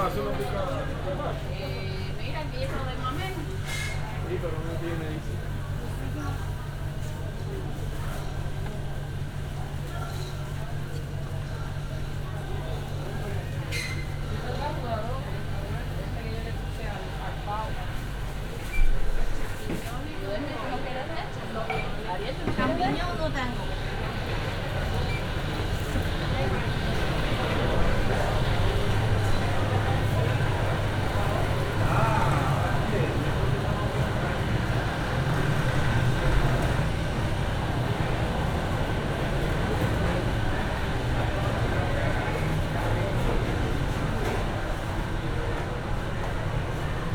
Santa Cruz de Tenerife, Calle de José Manuel Guimerá - Municipal Market Our Lady of Africa La Recova
(binaural rec) walking around and recording at the municipal market in Santa Cruz de Tenerife.
Santa Cruz de Tenerife, Spain